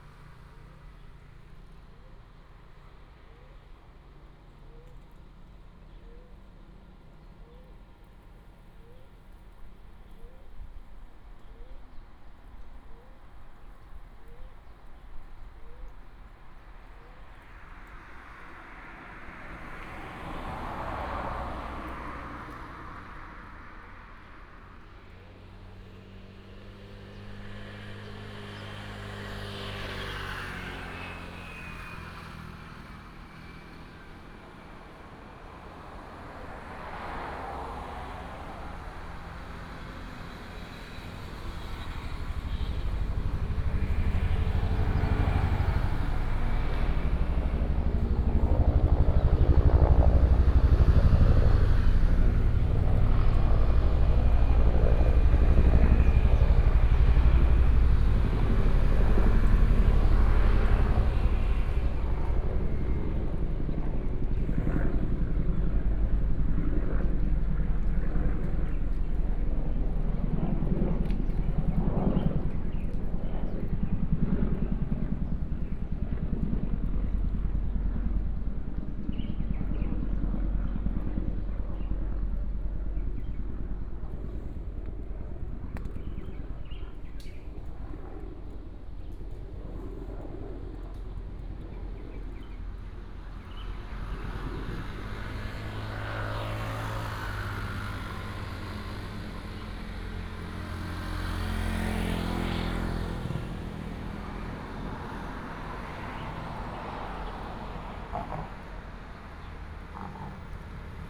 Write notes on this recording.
Next to the reservoir, Traffic sound, Bird sound, Helicopter